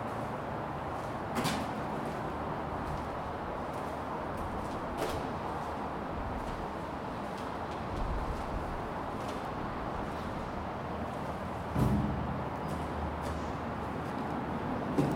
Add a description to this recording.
Recording made under the motorway en-route to Sulphur Beach Reserve